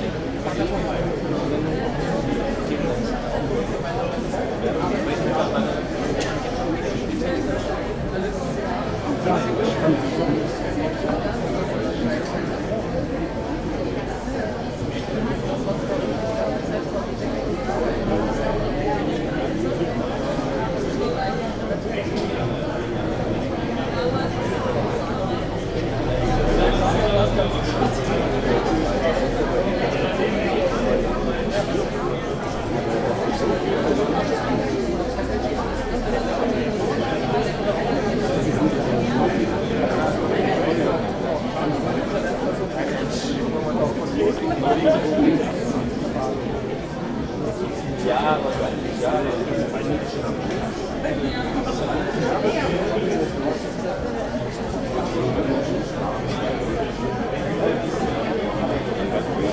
pavement in front of the gallery baba berlin, opening of an exhibition, people talking
the city, the country & me: july 3, 2009